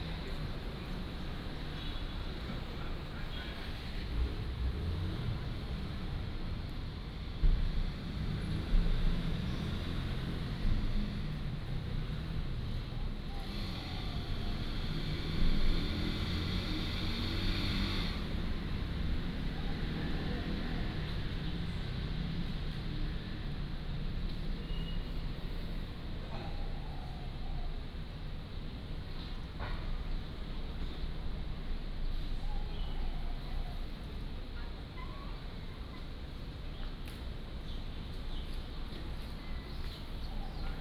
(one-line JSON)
{"title": "龍圖公園, Taipei City - in the Park", "date": "2015-06-04 16:32:00", "description": "in the Park, Bird calls, Chat", "latitude": "25.03", "longitude": "121.54", "altitude": "20", "timezone": "Asia/Taipei"}